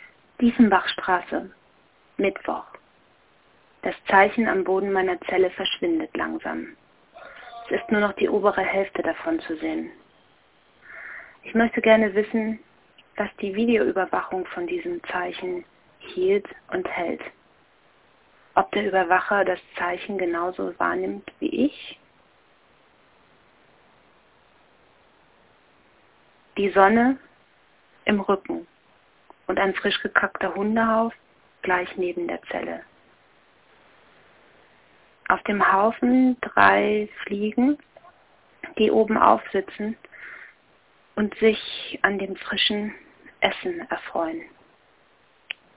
Telefonzelle, Dieffenbachstraße - Verschwindendes Zeichen 15.08.2007 11:29:43
Berlin